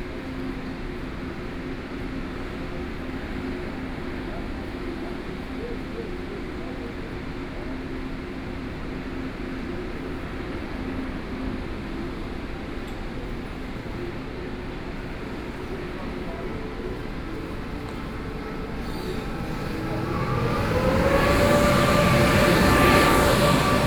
Taipei Main Station, Taiwan - On the platform
On the platform waiting for the train, Message broadcasting station, Sony PCM D50 + Soundman OKM II